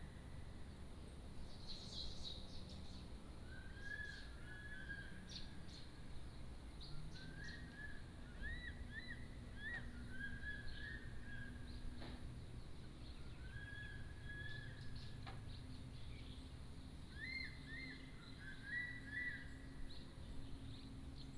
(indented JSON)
{
  "title": "埔里鎮桃米里, Nantou County - Bird calls",
  "date": "2015-04-29 10:38:00",
  "description": "Dogs barking, Bird calls",
  "latitude": "23.94",
  "longitude": "120.92",
  "altitude": "503",
  "timezone": "Asia/Taipei"
}